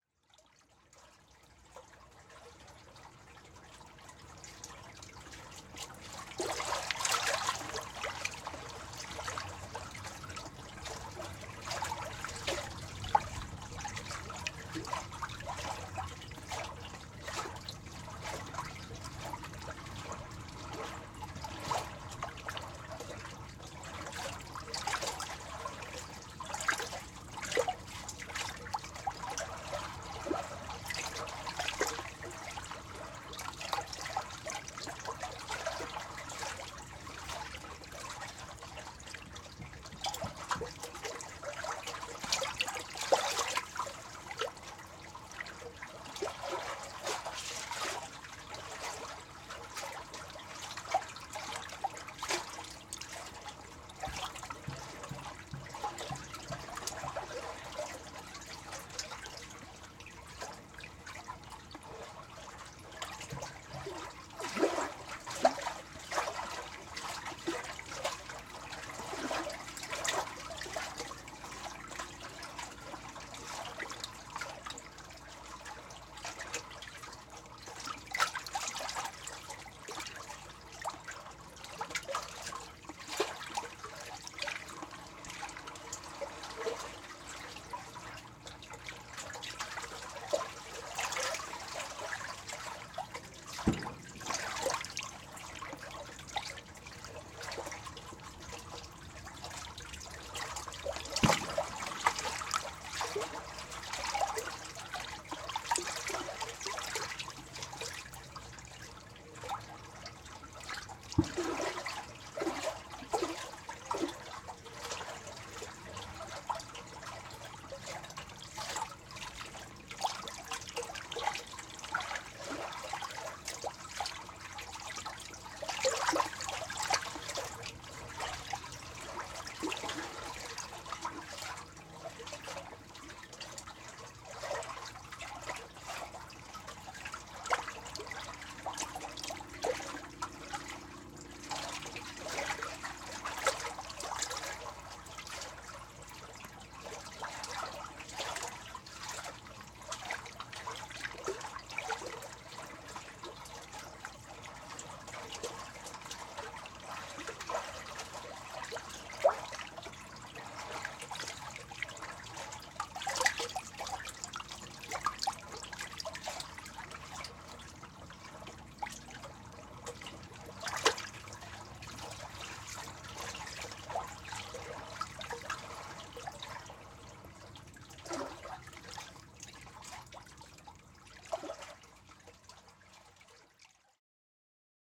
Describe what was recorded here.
I placed mics under the bridge to avoid s strong wind